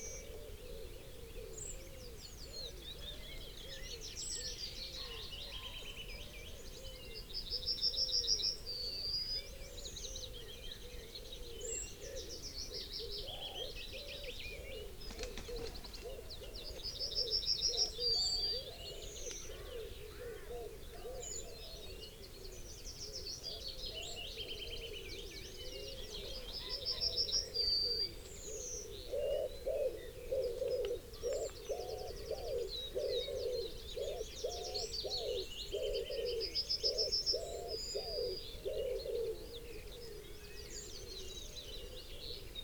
Chalk pit soundscape ... bird calls and song ... wood pigeon ... willow warbler... yellowhammer ... pheasant ... goldfinch ... blackbird ... linnet ... whitethroat ... binaural dummy head ... background noise ...
Malton, UK